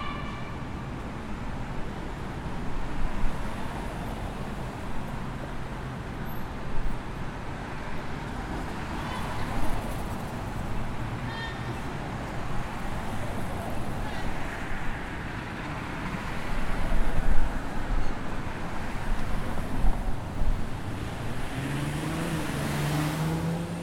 Downtown, Baltimore, MD, USA - City Unrest
Field recording taken outside of the Clarence M. Mitchell Jr. Courthouse which is often subject to protest and news coverage. In the evening around 6pm, an older woman dressed in church attire demands justice at the street corner of E Lexington st and St Paul, She is soon accompanied by other who quietly chant behind her.